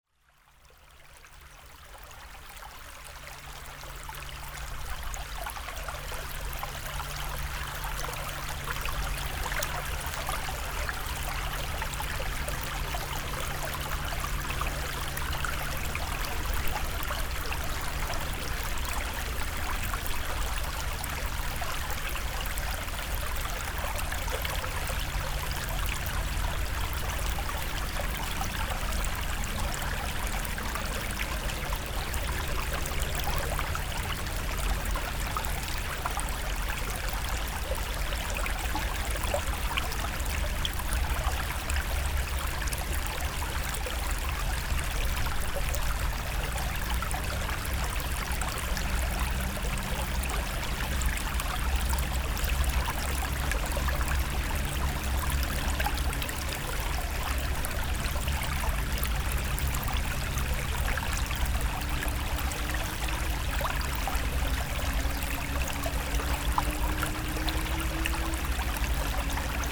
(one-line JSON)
{"title": "Saint-Aubin-lès-Elbeuf, France - Small river", "date": "2016-09-19 11:45:00", "description": "A small river without name, near the city of Elbeuf.", "latitude": "49.30", "longitude": "1.00", "altitude": "7", "timezone": "Europe/Paris"}